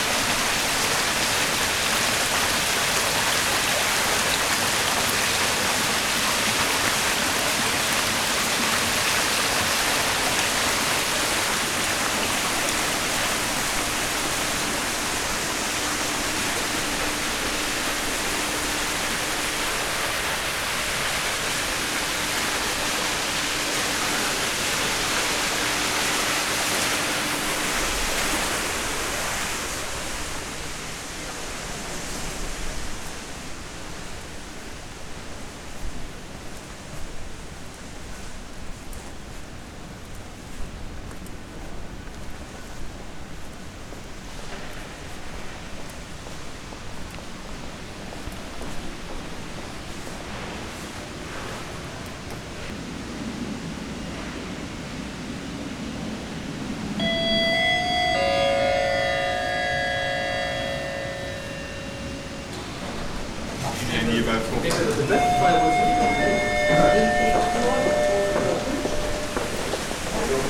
Rotterdam, Beursplein, WTC - a walk through world trade center
A short walk through the Rotterdam World Trade Center.
Starting at the outside elevator stairs, then passing through the main hall with an indoor fountain, passed the elevator room back to the exit. WLD